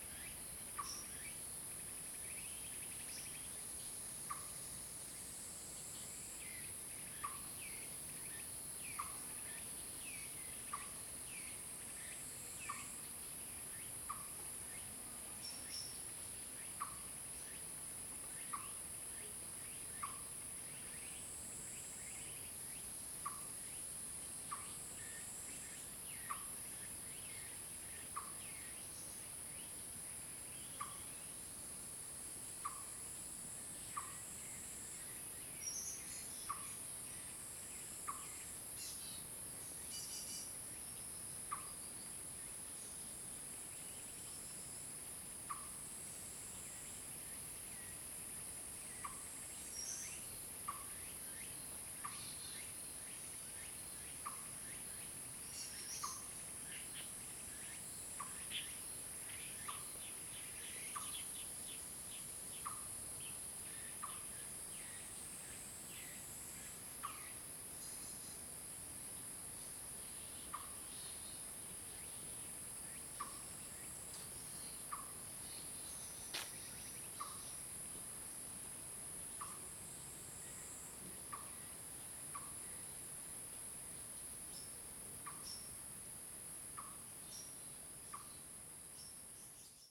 {"title": "馬璘窟, 土地公廟, Puli, Taiwan - 土地公廟", "date": "2015-09-08 11:50:00", "description": "Zoon H2n (XY+MZ) (2015/09/08 008), CHEN, SHENG-WEN, 陳聖文", "latitude": "24.00", "longitude": "120.91", "altitude": "723", "timezone": "Asia/Taipei"}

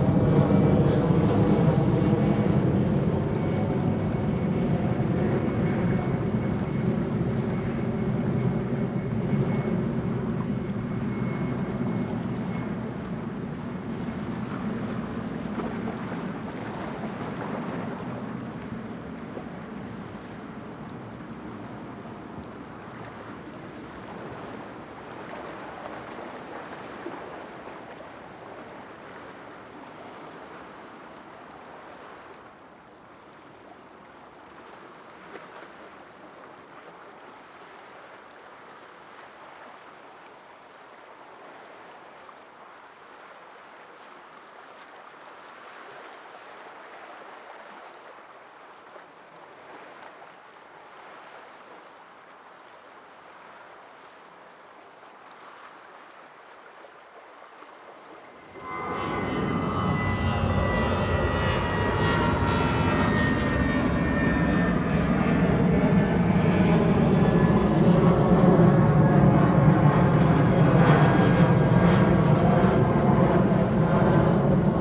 {"title": "El Prat de Llobregat, Espagne - Llobregat - Barcelone - Espagne - Plage de la Roberta", "date": "2022-07-24 14:30:00", "description": "Llobregat - Barcelone - Espagne\nPlage de la Roberta\nAmbiance de la plage, sur la digue, au bout de la piste de décollage de l'aéroport.\nZOOM F3 + AKG 451B", "latitude": "41.28", "longitude": "2.07", "timezone": "Europe/Madrid"}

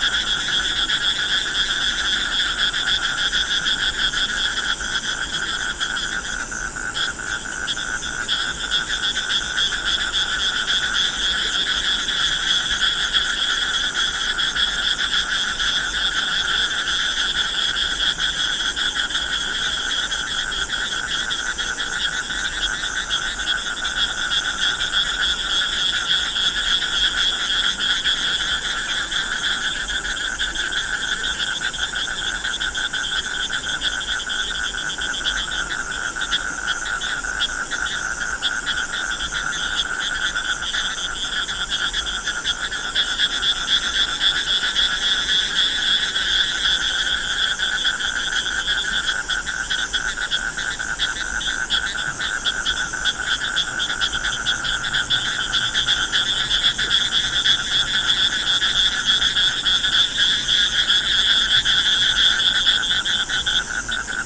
{"title": "Thungabadra River, Hampi", "date": "2009-02-27 21:16:00", "description": "India, Karnataka, Hampi, frogs", "latitude": "15.34", "longitude": "76.46", "altitude": "416", "timezone": "Asia/Kolkata"}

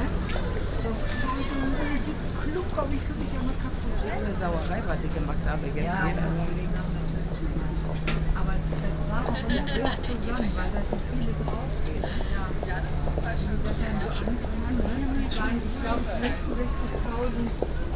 {"title": "essen, kettwigerstraße, market place", "date": "2011-05-31 18:08:00", "description": "In der Fussgängerzone am Markt. Die Stimmen der Marktverkäufer und Kunden.\nInside the pedestrian city zone at the market. seller and customer talking in local tongue.\nProjekt - Stadtklang//: Hörorte - topographic field recordings and social ambiencesrecordings and social ambiences", "latitude": "51.46", "longitude": "7.01", "altitude": "83", "timezone": "Europe/Berlin"}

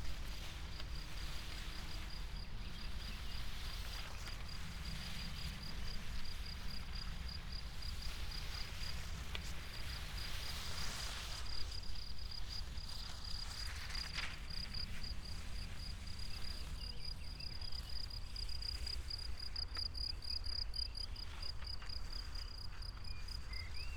{"title": "path of seasons, Piramida, Maribor, Slovenia - silence of written words", "date": "2013-06-08 14:46:00", "description": "walk with two long strips of thin paper, covered with written words, crickets, flies, birds, wind through paper and grass ears", "latitude": "46.57", "longitude": "15.65", "altitude": "385", "timezone": "Europe/Ljubljana"}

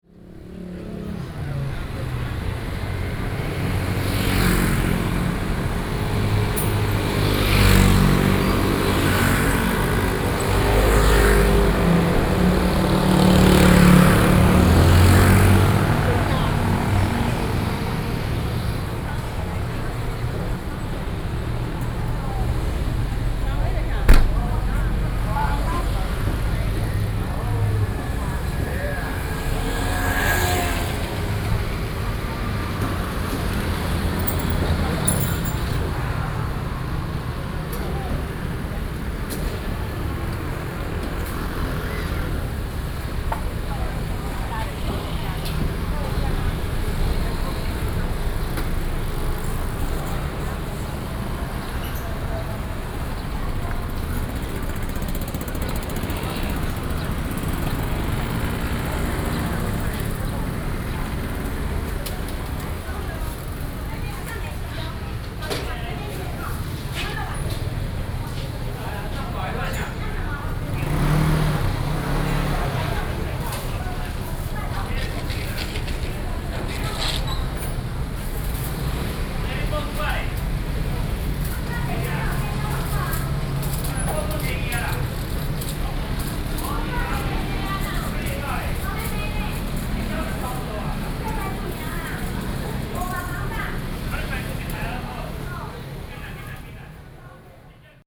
{"title": "Jianguo Rd., Yingge Dist., New Taipei City - Walking in the traditional market", "date": "2012-06-20 07:21:00", "description": "Walking in the traditional market, traffic sound\nSony PCM D50+ Soundman OKM II", "latitude": "24.95", "longitude": "121.35", "altitude": "54", "timezone": "Asia/Taipei"}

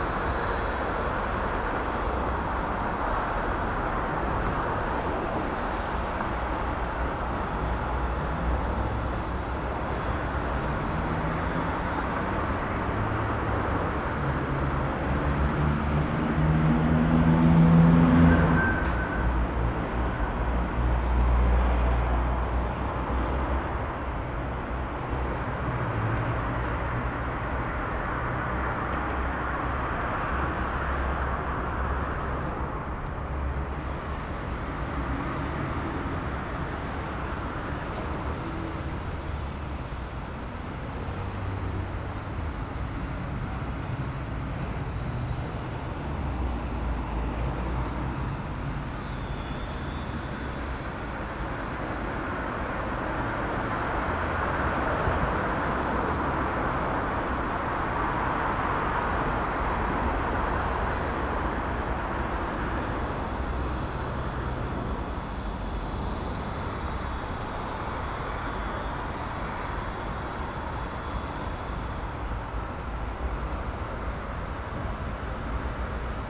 {"title": "berlin: bundesallee - the city, the country & me: above the tunnel entrance", "date": "2011-11-04 12:02:00", "description": "the city, the country & me: november 4, 2011", "latitude": "52.48", "longitude": "13.33", "altitude": "45", "timezone": "Europe/Berlin"}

